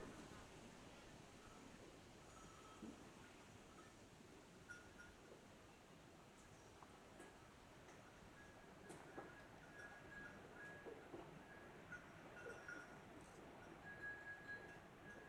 Recording made at the farm of Manuel.
Santa Cruz de Tenerife, Spain